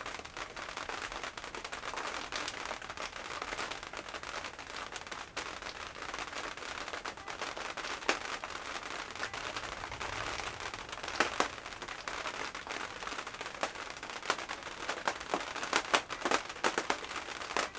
workum, het zool: marina, berth h - the city, the country & me: marina, aboard a sailing yacht
thunderstorm in the distance, rain hits the tarp and stops
the city, the country & me: june 29, 2011
Workum, The Netherlands, June 2011